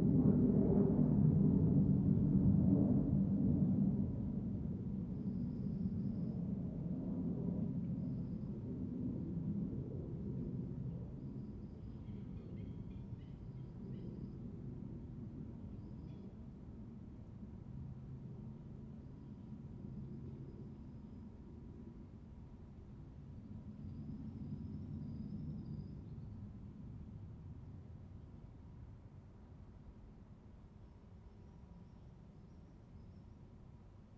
海埔路181巷, Xiangshan Dist., Hsinchu City - On the river bank
On the river bank, There are fighters taking off in the distance, Zoom H2n MS+XY